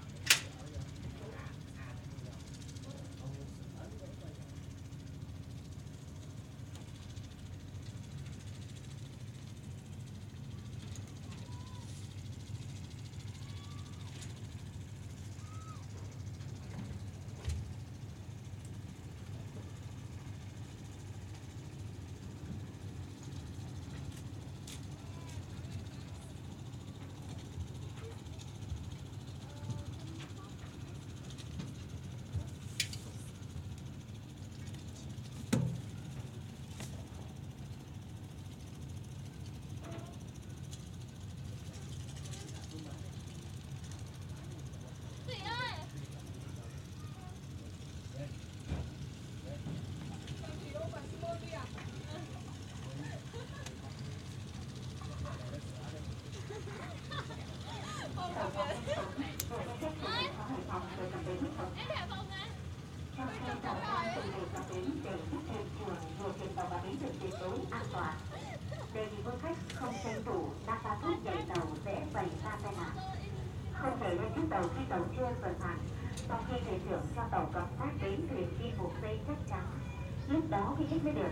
Máy Chai, Ngô Quyền, Hải Phòng, Vietnam - Départ Ferry Hai Phong Mai 1999
Dans la foule
Mic Sony stéréo + Minidisc Walkman
13 May 1999